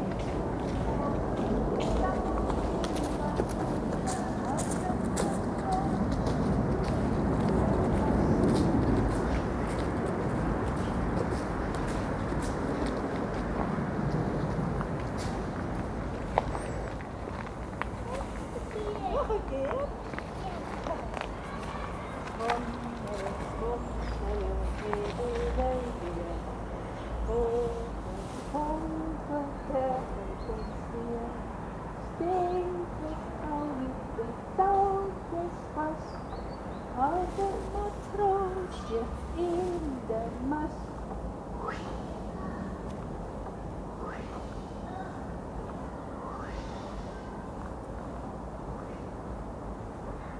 Song for pushing a swing and airport roar
A woman sings to a young child while pushing her on a swing. Distant roars from Tegel airport.